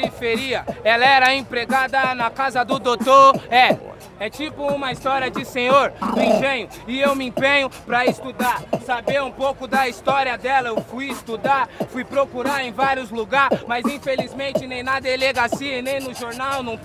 Av. Paulista, São Paulo - MCs Battle in Sao Paulo (Batalha Racional)
Batalha Racional on Avenida Paulista each Friday.
Recorded on 16th of March 2018.
With: Camoes, Koka, 247, Bone, Igao, Coiote, Skol, Neguinao, Kevao, Segunda Vida, Viñao Boladao, Luizinho, Danone, Fume...
Recorded by a MS Setup Schoeps CCM41+CCM8 on a cinela suspension/windscreen.
Recorder Sound Devices 633